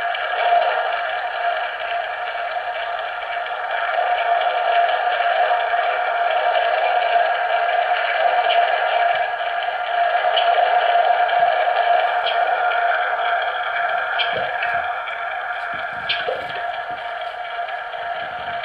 Polder / Shitty Listener - Polder & Shitty Listener
Track Recorded first in RosiÃ¨res aux Salines in France, replayed again in Berlin, in Jason's olympus recorder area, late after the amazing Feuerrote Blume projection.
Berlin, Germany